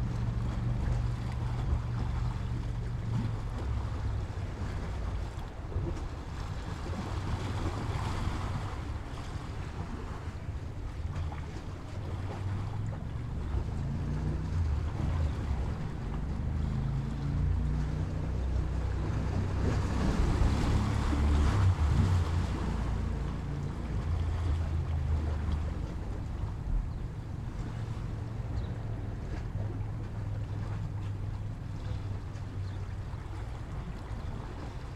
{
  "title": "Plakias, Crete, on a jetty",
  "date": "2019-05-03 14:05:00",
  "description": "on a jetty",
  "latitude": "35.19",
  "longitude": "24.39",
  "altitude": "1",
  "timezone": "Europe/Athens"
}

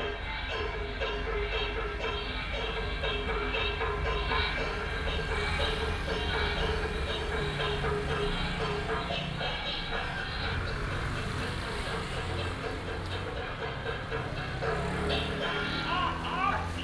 {
  "title": "護庇宮, Yanshui Dist., Tainan City - At the temple",
  "date": "2018-05-07 19:50:00",
  "description": "At the temple, Traffic sound\nBinaural recordings, Sony PCM D100+ Soundman OKM II",
  "latitude": "23.32",
  "longitude": "120.27",
  "altitude": "11",
  "timezone": "Asia/Taipei"
}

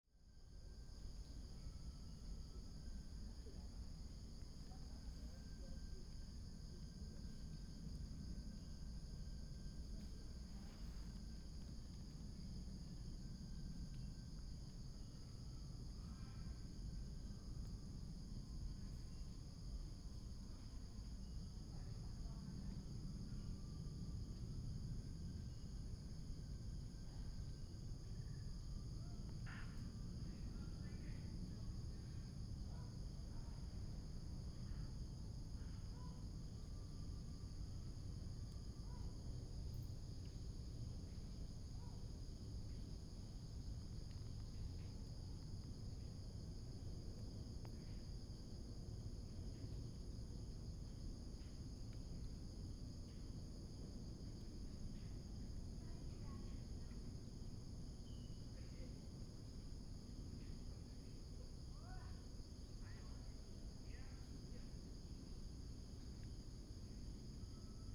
{
  "title": "十八尖山, Hsinchu City - Early in the park",
  "date": "2017-09-21 05:10:00",
  "description": "Early in the park, Insects sound, sound of the plane, Binaural recordings, Sony PCM D100+ Soundman OKM II",
  "latitude": "24.79",
  "longitude": "120.98",
  "altitude": "104",
  "timezone": "Asia/Taipei"
}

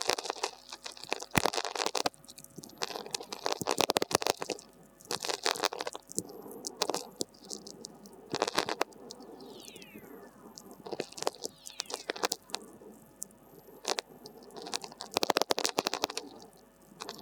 {
  "title": "Nemeiksciai, Lithuania, VLF emission with whistlers",
  "date": "2020-06-06 20:00:00",
  "description": "listening to atmospheric radio with VLF receiver. distant lightnings - tweakers with occasional whistlers",
  "latitude": "55.47",
  "longitude": "25.64",
  "altitude": "123",
  "timezone": "Europe/Vilnius"
}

